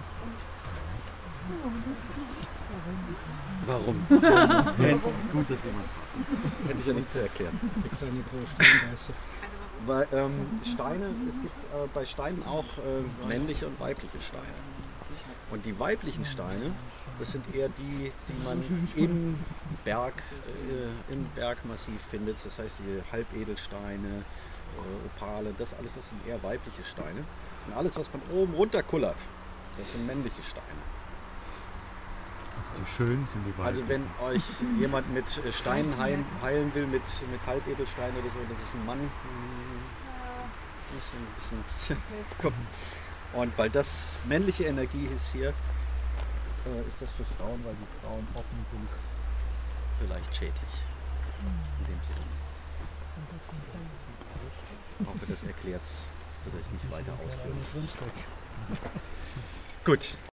shamanistic chief of a "schwitzhütten" ceremony describes parts of the procedure
soundmap nrw: social ambiences/ listen to the people - in & outdoor nearfield recordings